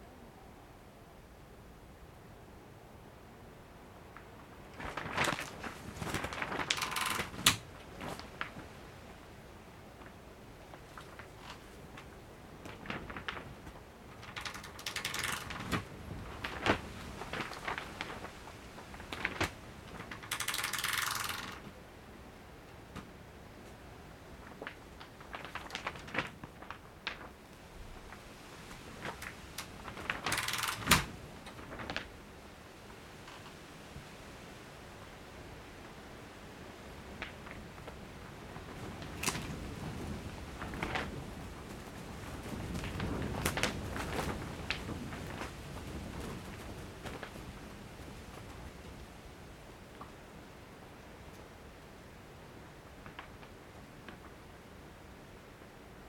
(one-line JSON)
{"title": "Brakel, Germany - Plastic Greenhouse at Night", "date": "2018-09-22 01:21:00", "description": "01:30 inside a 4x3 meter greenhouse made of plastic sheeting. Microphone placed on the ground on a small tripod approximately 0.5 meters in from the entrance.\nThe location of the greenhouse is at a family friends house in the immediate area. I didn't geo locate the exact spot for privacy reasons.\nRecorded with a Zoom h5 XYH-5 Capsule.", "latitude": "51.65", "longitude": "9.14", "altitude": "158", "timezone": "GMT+1"}